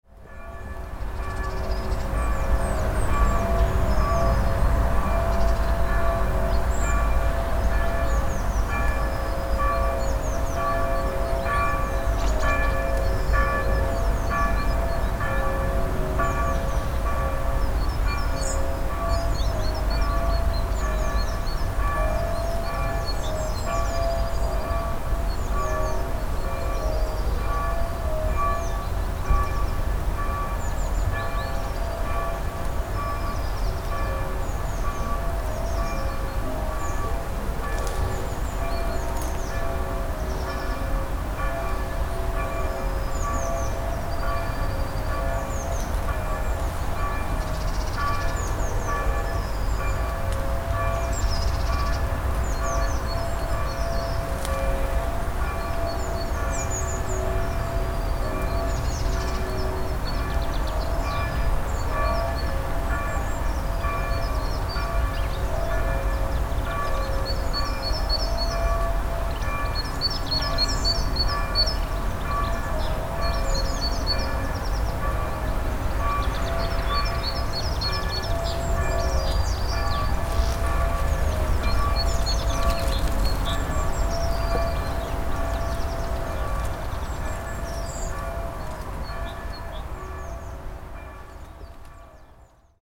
Zur Historischen Mühle, Potsdam, Allemagne - Bell
Church Bell new the castle, Zoom H6, MS Microphone